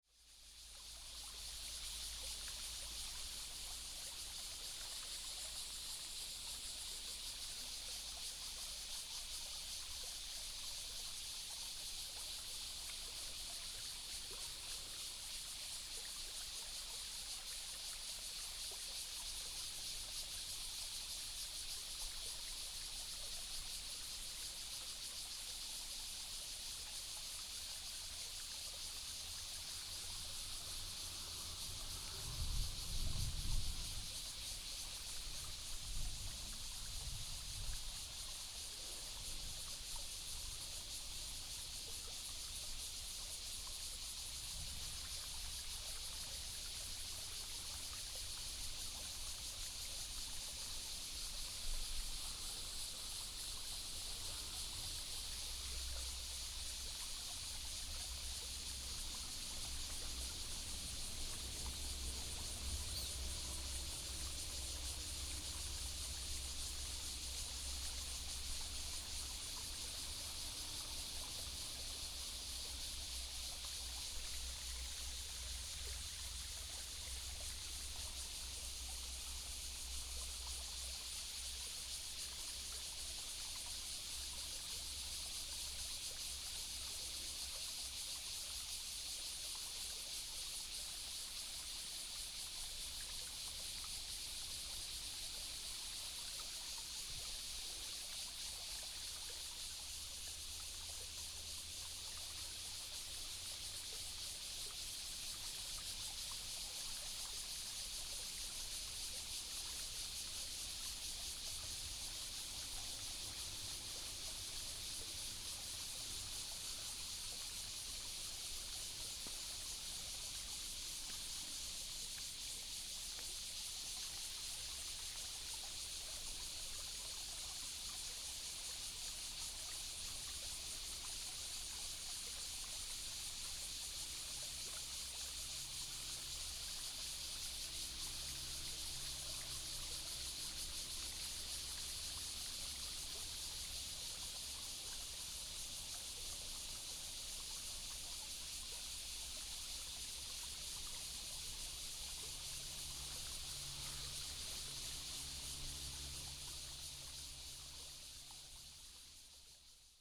隆昌村, Donghe Township - Cicadas and streams
Cicadas sound, The sound of water streams
都蘭林場 Donghe Township, Taitung County, Taiwan, 6 September 2014